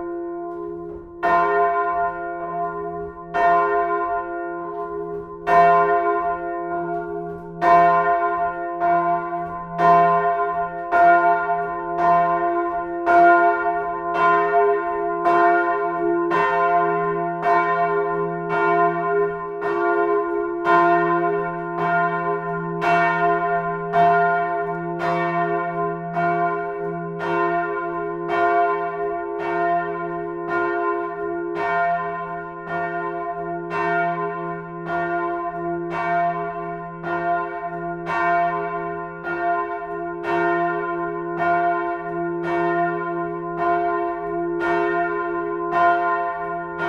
essen, old catholic church, bells
and the next one.
those bells are not iron, which is rare to find in Germany.
Projekt - Klangpromenade Essen - topographic field recordings and social ambiences